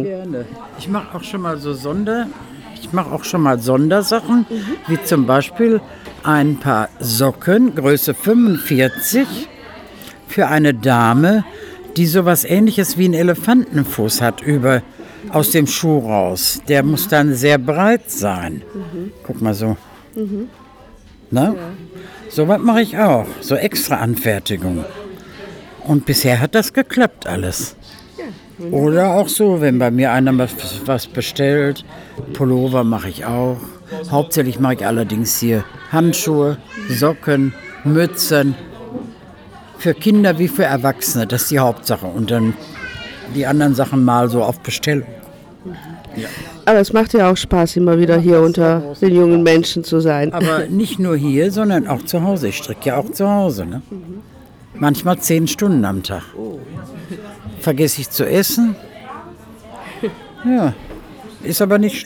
Slavi talks with Lilo (78) … "this is my ideal place to be, almost like home… I enjoy to knit here… I enjoy being among the young mums and children.."
the recording was produced during a three weeks media training for women in a series of events at African Tide during the annual celebration of International Women’s Day.